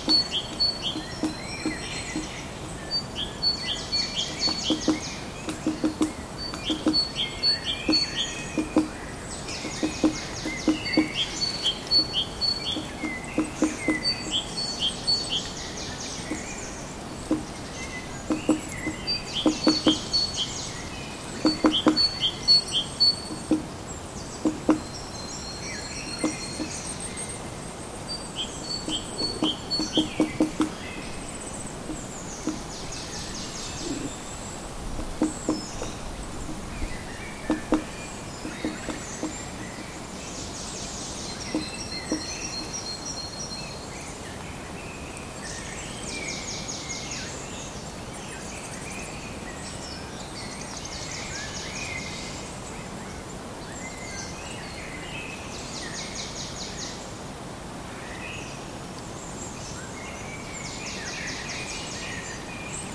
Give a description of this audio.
woodpecker at moenau forest, some other birds -